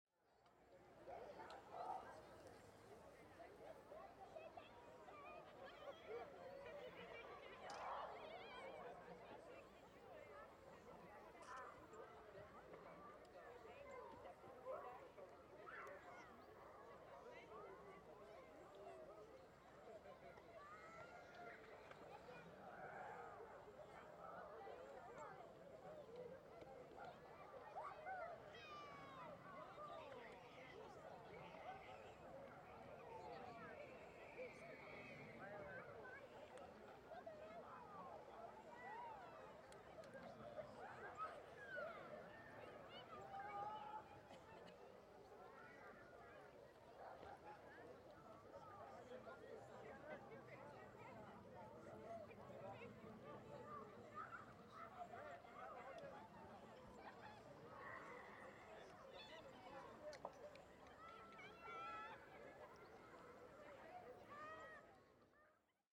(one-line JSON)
{"title": "Oyonnax, France - Lac Genin (Oyonnax - Ain)", "date": "2022-08-28 14:00:00", "description": "Lac Genin (Oyonnax - Ain)\nDernier week-end avant la rentrée scolaire\nLe soleil joue avec les nuages, la température de l'eau est propice aux baignades\nla situation topographique du lac (dans une cuvette) induit une lecture très claire du paysage sonore.\nZOOM F3 + Neuman KM184", "latitude": "46.22", "longitude": "5.70", "altitude": "847", "timezone": "Europe/Paris"}